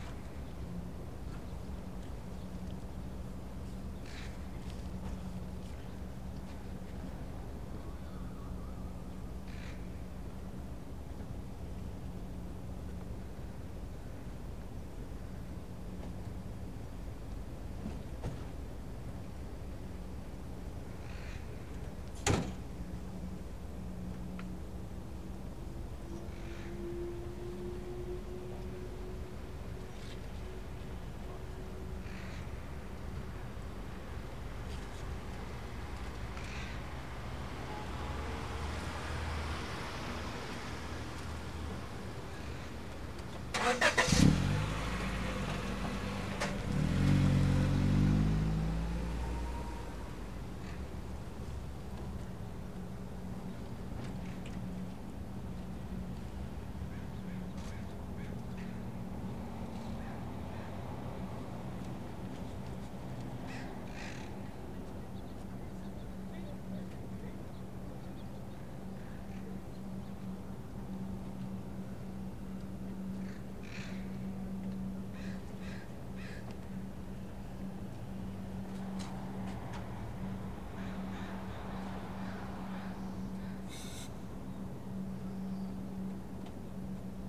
Edmonds Waterfront
A typical bustling weekend morning at this popular waterfront park north of Seattle. A constant stream of characters come and go while birds fight over their leftovers.
This was the start of a series (Anode Urban Soundscape Series) of phonographic recordings, made with my new Sony MZ-R30 digital MiniDisc recorder, and dubbed to Compact Disc.
Major elements:
* SCUBA divers preparing to dive on Edmonds' underwater park
* Cars and trucks (mostly old) coming in, parking, and leaving
* Two ferries docking in the distance and unloading
* Construction work underway on the new ferrydock
* Seagulls, pigeons and crows
* A bicyclist coasting through
* A man walking past with an aluminum cane